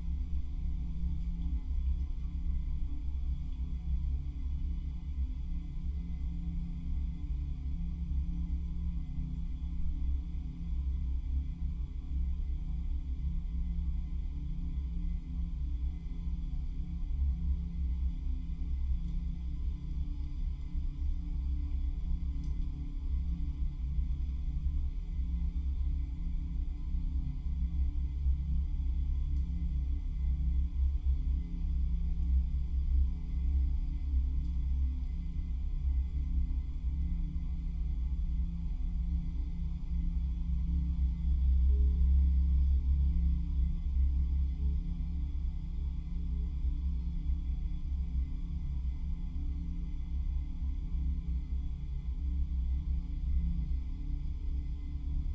resonance inside a hollow steel gatepost in an isolated yark in telliskivi, tallinn
Tallinn, Estonia, 20 May 2010